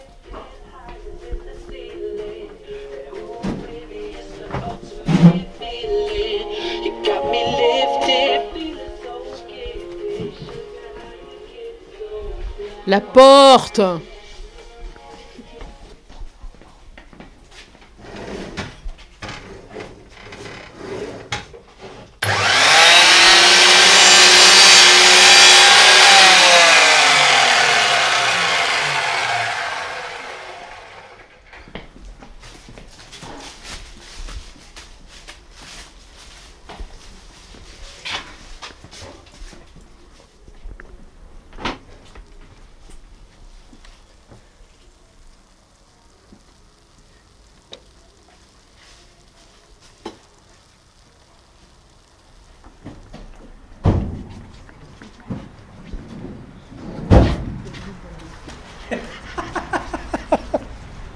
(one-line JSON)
{"title": "Saint-Nazaire, France - Ambiance à l'Atelier (OMJ)", "date": "2015-10-27 15:40:00", "description": "Clément, Etan, Juliette, Shanonn, Blandine et Stéphane vous invitent à découvrir l'ambiance conviviale qui règne à l'Atelier de l'Office municipal de la Jeunesse.", "latitude": "47.29", "longitude": "-2.26", "altitude": "22", "timezone": "Europe/Paris"}